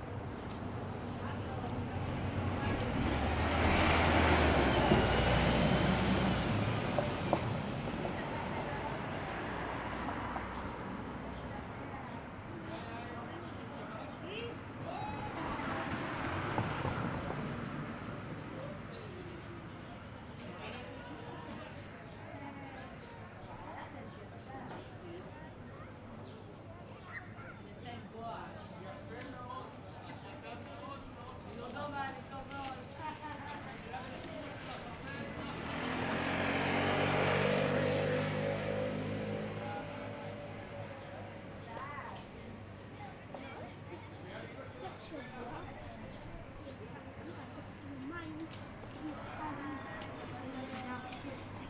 Tel Aviv-Yafo, Israel - Main street around 12pm
Street, Car pass, Murmur, Birds